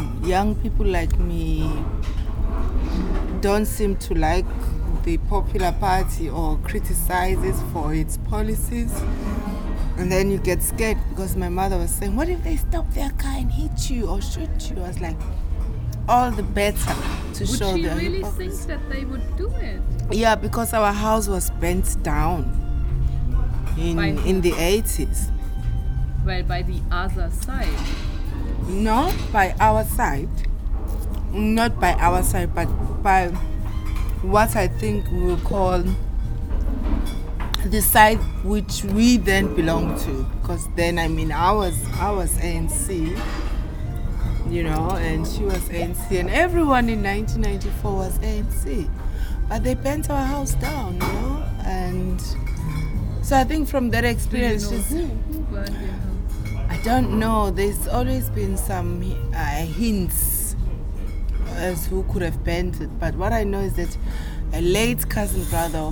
Bat Centre, South Beach, Durban, South Africa - don't say a word...
Faith is full of stories...
recorded during the Durban Sings project